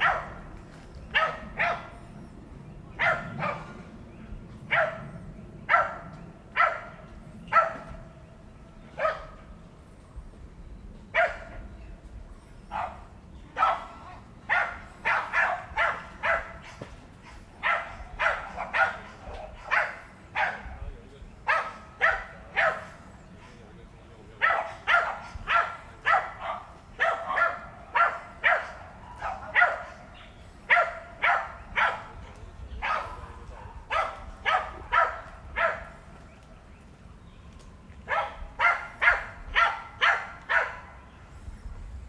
二叭子植物園, Xindian Dist. - Dogs barking
Dogs barking, the stream
Zoom H4n + Rode NT4